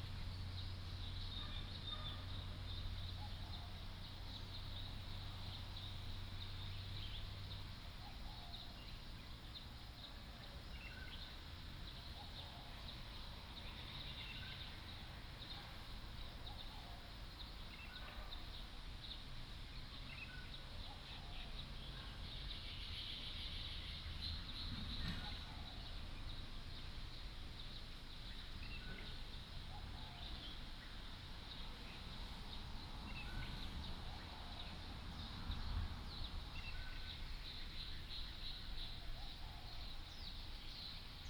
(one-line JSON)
{"title": "桃源國小, Puli Township - Next to the stream", "date": "2015-04-29 08:15:00", "description": "Dogs barking, Traffic Sound, Birdsong, Next to the stream", "latitude": "23.94", "longitude": "120.93", "altitude": "474", "timezone": "Asia/Taipei"}